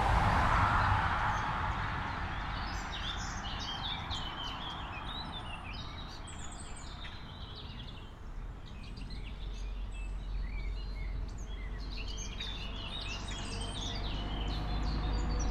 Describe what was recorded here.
a bridge between the woods and the traffic: the recording of a short spring morning walk.